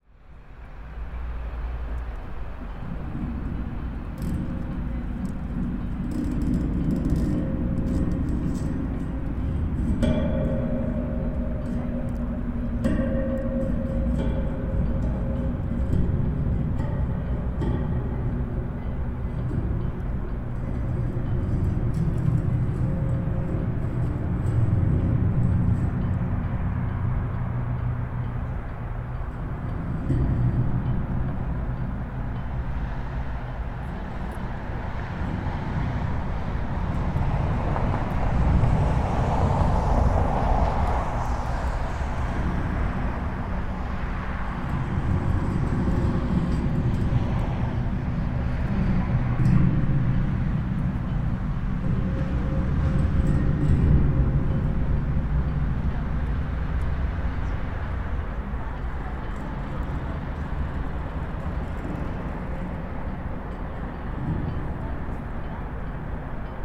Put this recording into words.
playing the fence to local village sounds in Skoki